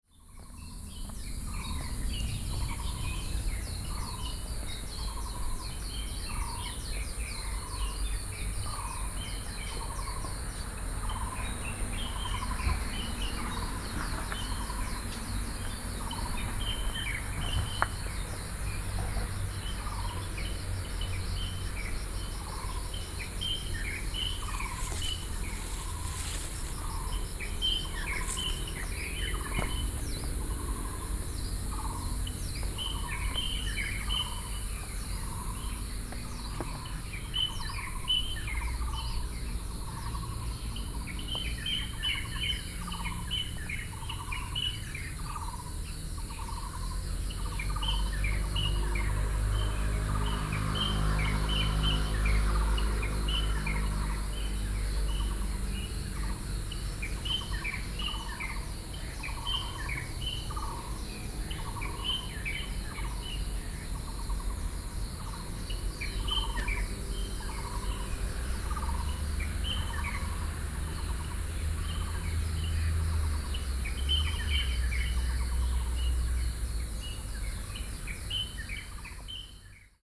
Shuangxi Park, Taipei - Early in the morning
The park early in the morning, Sony PCM D50 + Soundman OKM II